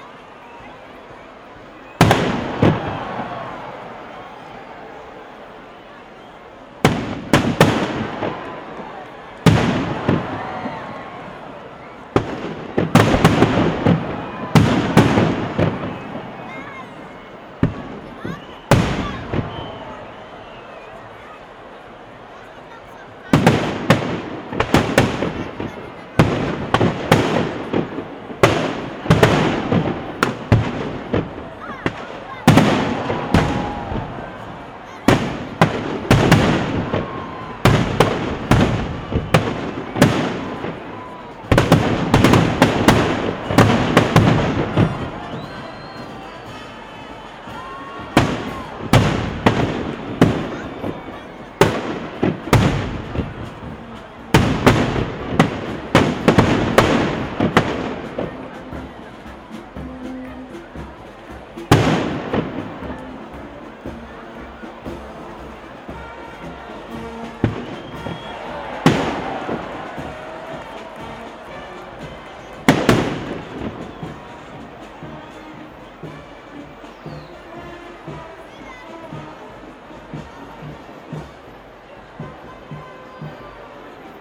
Zürich, Bellevue, Schweiz - Böllerschüsse
Die Zünfte treffen bei dem Sechseläuteplatz ein. Volk, Böllerschüsse.
Sechseläuten ist ein Feuerbrauch und Frühlingsfest in Zürich, das jährlich Mitte oder Ende April stattfindet. Im Mittelpunkt des Feuerbrauchs steht der Böögg, ein mit Holzwolle und Knallkörpern gefüllter künstlicher Schneemann, der den Winter symbolisiert.
Zürich, Switzerland, 18 April